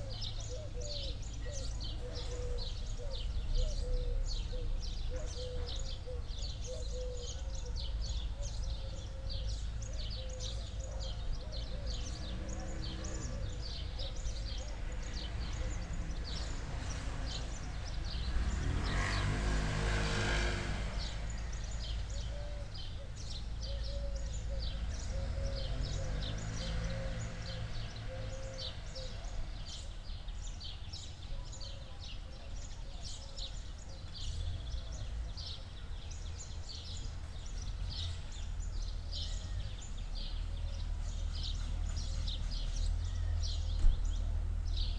Georgioupoli, Crete, soundscape with pigeons
standing at the kids zone...
Georgioupoli, Greece, 3 May, 16:10